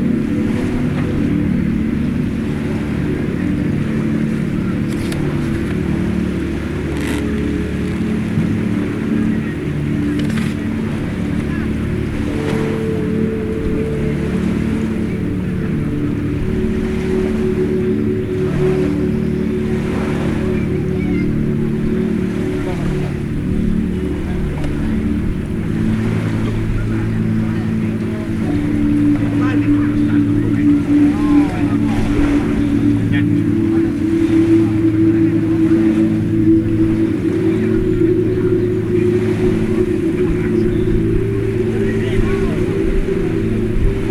Alghero Sassari, Italy - Marina
I recorded this while laying on the beach in Alghero. I'm not sure what was being played on the speakers on the beach that day but it mixed very well with the sounds of the beach.